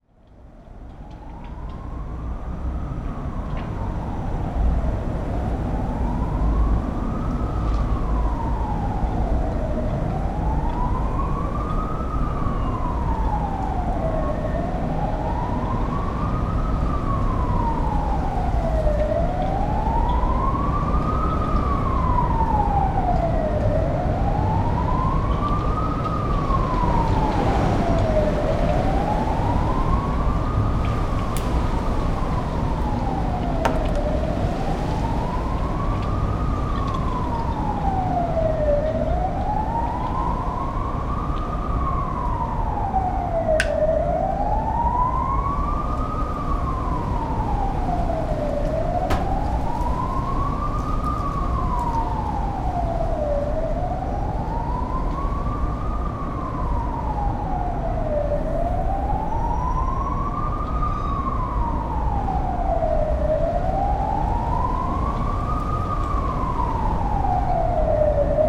in the backyard, on a windy day.
PCM-M10, internal microphones.
Saint-Gilles, Belgium, 5 January